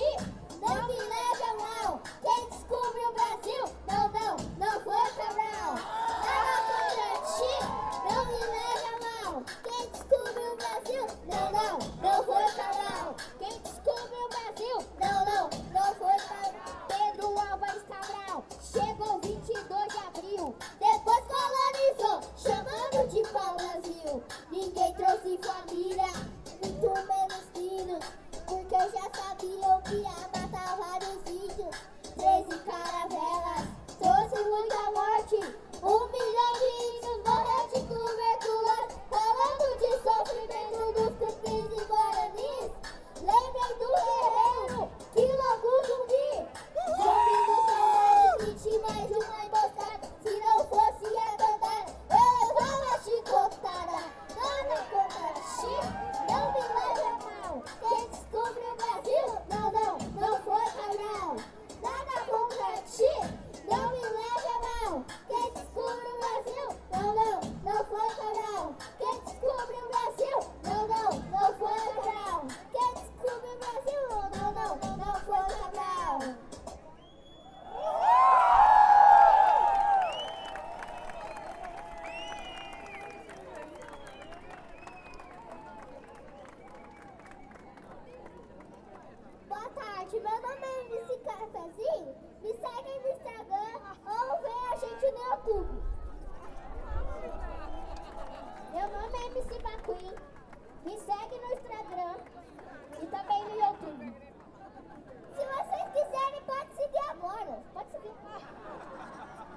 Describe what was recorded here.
Gravação de campo da paisagem sonora do show de crianças (Mc Maqueen e Mc Cafezinho) durante a manifestação intitulada "Ato da Jornada Lula Livre". Feito com o gravador Tascam DR40, em um ambiente aberto, não controlável, com dezenas de pessoas.